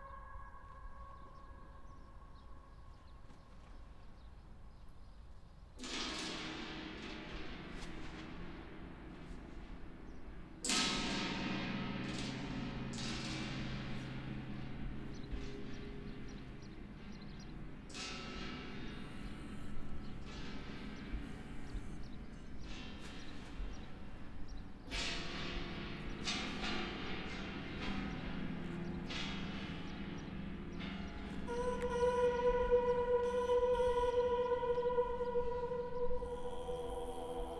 El Maarad, Tarablus, Libanon - oscar niemeyer dome tripoli activation
Activation of the natural acoustics of the 'experimental theater dome' at the Rachid Karami International Fair build designed by legendary Brazilian architect Oscar Niemeyer in 1963.